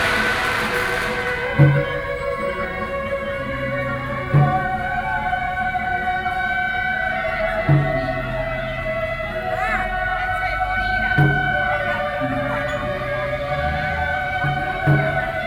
Sec., Hankou St., Taipei City - Traditional temple festivals
Firework, Traditional temple festivals, Binaural recordings, Sony PCM D50 + Soundman OKM II, ( Sound and Taiwan - Taiwan SoundMap project / SoundMap20121115-3 )
15 November 2012, 11:17